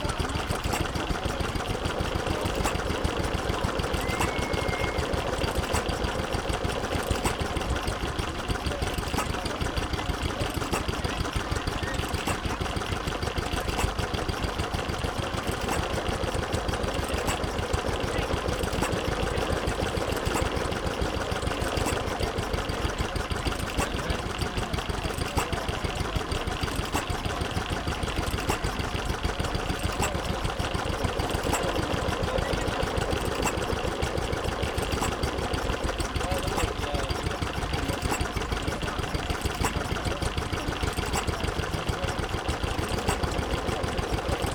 Back Ln, York, UK - Ryedale Show ... static engine ...
Static engine ... Wolseley WD2 1947 engine ... last used on an agricultural lifter for moving bales ...
25 July